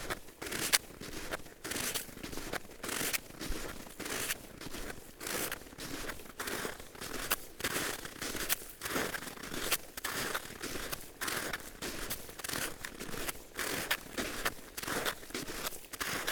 walking on frozen snow and ice ... parabolic ...